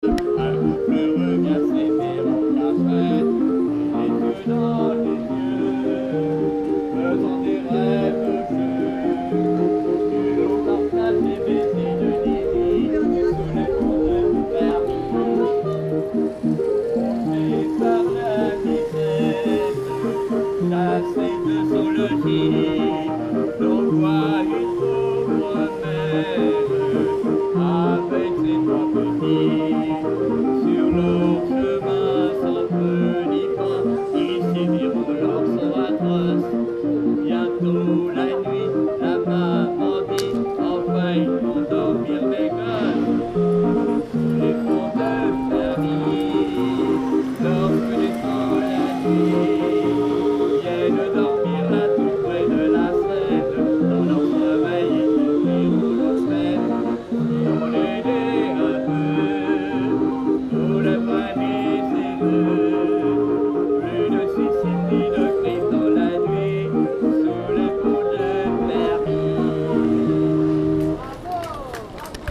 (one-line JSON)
{"title": "Capitole, Toulouse, France - Hand powered organ", "date": "2014-09-21 17:09:00", "description": "Man singing along to his hand-powered organ while his wife and tourists watch. He was turning a handle to power the organ and feeding 'music rolls' (sheets of card with holes to represent the notation) into it.\nZoom H1", "latitude": "43.60", "longitude": "1.44", "altitude": "150", "timezone": "Europe/Paris"}